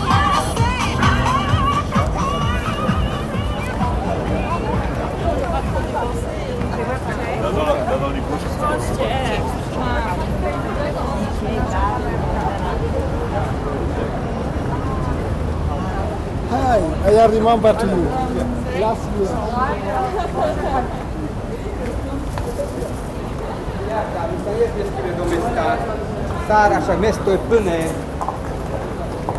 recording of soundwalk across the bridge by Peter Cusack.part of the Prague sounds project